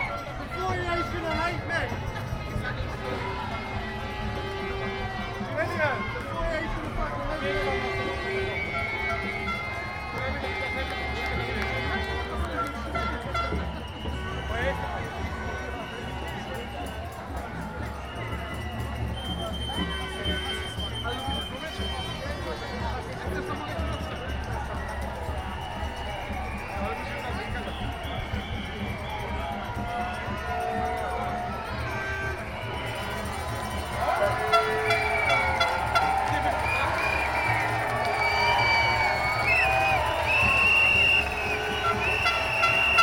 Marble Arch, Oxford St, London, UK - Anti-lockdown Freedom March
Binaural recording from the anti-lockdown freedom march in central London on Saturday 25th March. Attended by 25,000 to 500,000 people.
Greater London, England, United Kingdom, 25 April, 13:00